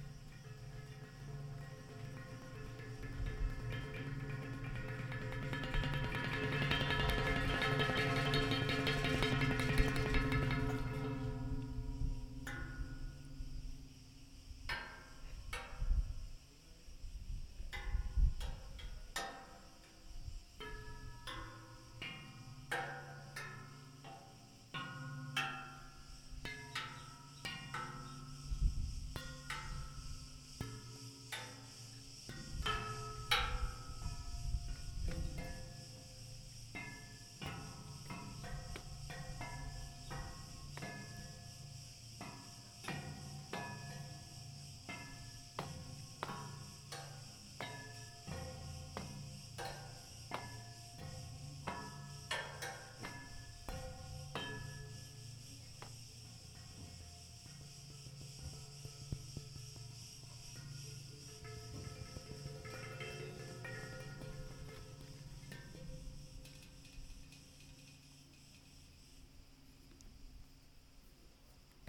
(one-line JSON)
{"title": "Epar.Od. Mourtzanas-Anogion, Garazo, Greece - Metallic door playing", "date": "2017-08-12 14:52:00", "description": "Playing with the dynamics of a metallic door.", "latitude": "35.35", "longitude": "24.79", "altitude": "252", "timezone": "Europe/Athens"}